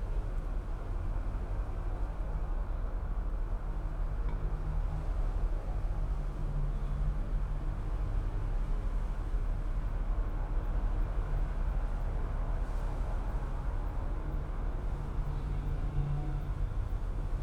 Viale Miramare, Trieste, Italy - traffic heard in a tube
the nasty traffic sound of nearby Viale Miramare heard through the metal tube of a traffic sign.
(SD702, DPA4060)
7 September 2013, 18:30